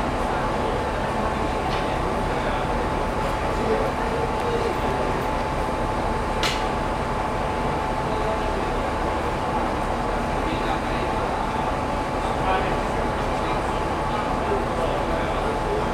Train station, Nova Gorica, Slovenia - The sounds on the train station
Waiting for the train to leave the station. But the train never leaves.